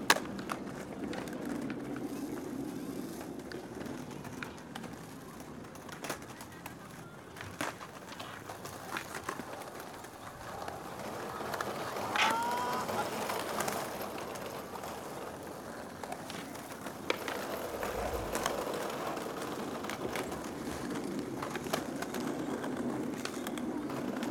Hong Kong, Kennedy Town, 西環 - Kennedy Town promenade at night
This recording was taken at night along the promenade in Kennedy Town. There are a lot of skateboarders riding about.